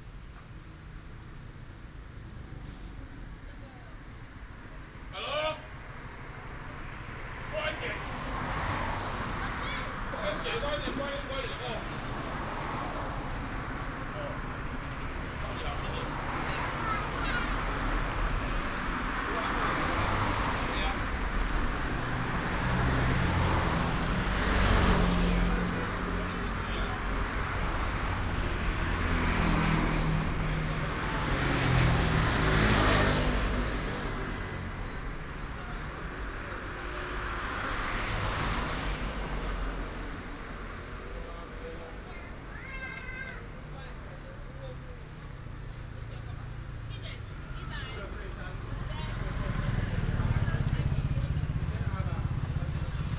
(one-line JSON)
{"title": "鐵砧山中山路站, Taichung City - next to the Bus station", "date": "2017-10-09 21:30:00", "description": "Bus station next to the home, Being barbecued, Traffic sound, Binaural recordings, Sony PCM D100+ Soundman OKM II", "latitude": "24.36", "longitude": "120.64", "altitude": "57", "timezone": "Asia/Taipei"}